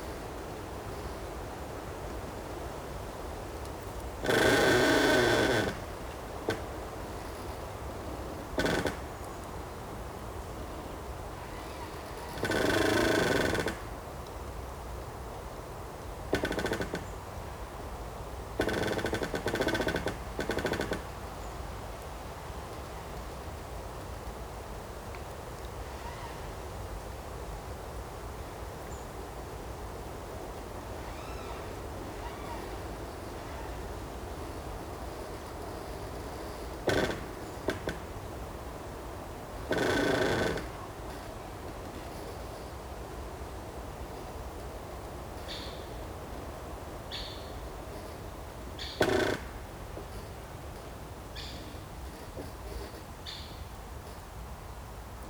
Creaking tree 1 internal and external, Vogelsang, Zehdenick, Germany - Same recording; external atmosphere sounds alone
Recorded with DPA4060 mics.
Brandenburg, Deutschland, 25 August, ~13:00